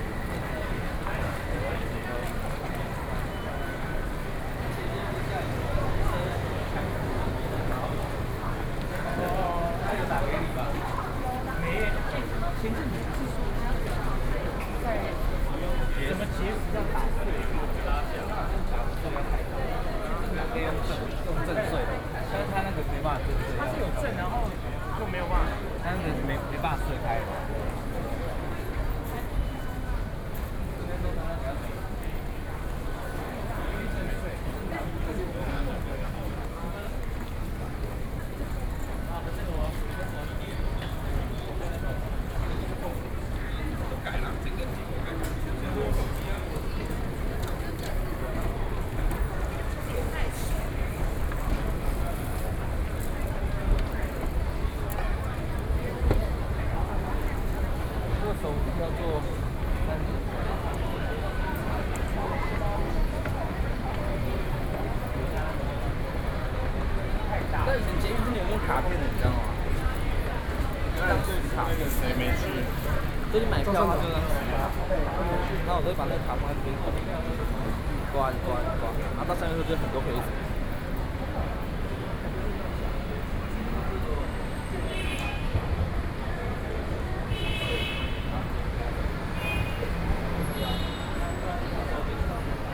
Zhongxiao Fuxing Station - Soundwalk
Zhongxiao Fuxing Station, In the process of moving escalator, Sony PCM D50 + Soundman OKM II